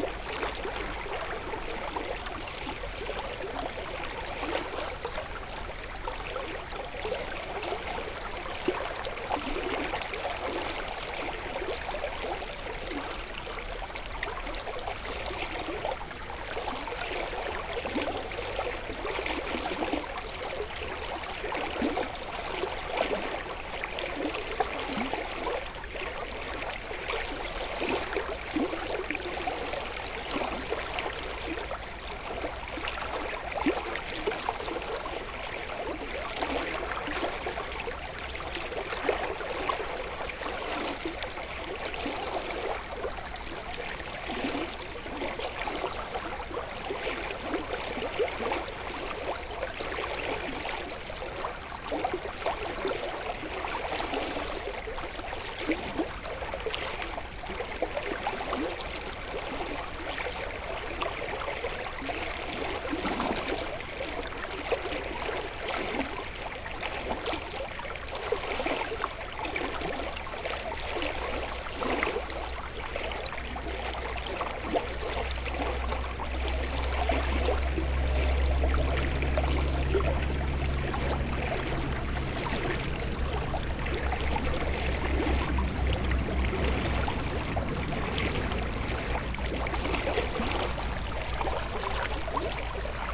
Clérey, France - Seine river in Clerey village
The Seine river is now large and alive, 140 km after the spring. This of fish river is very endearing, clear water, beautiful green trees. It's a bucolic place.